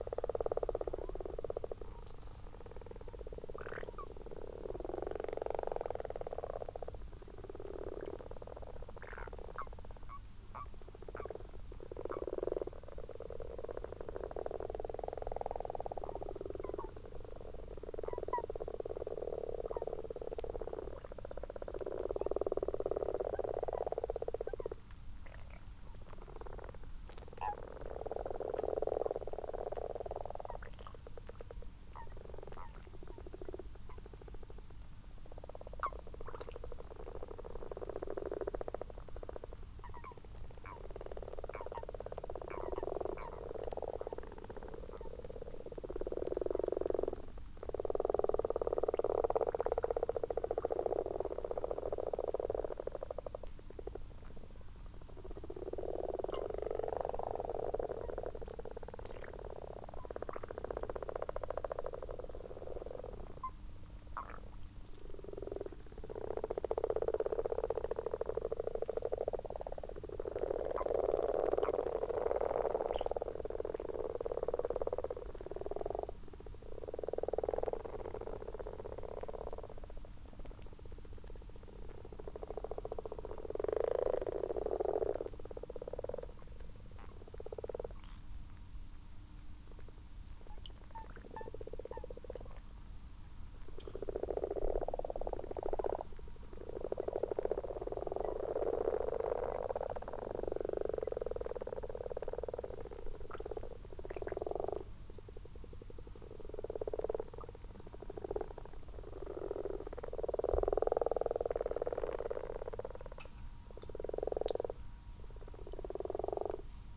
common frogs and common toads in a garden pond ... xlr sass on tripod to zoom h5 ... bird call ... distant tawny owl 01:17:00 plus ... unattended time edited extended recording ...